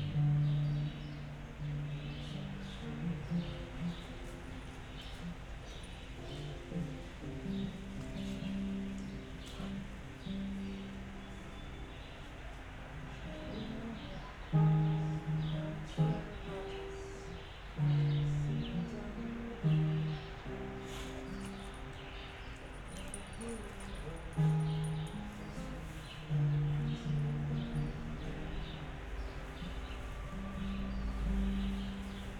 Berlin Bürknerstr., backyard window - summer afternoon, dog, musician
a musician is practising, neighbor's dog is strolling around, summer afternoon ambience
(SD702, Audio Technica BP4025)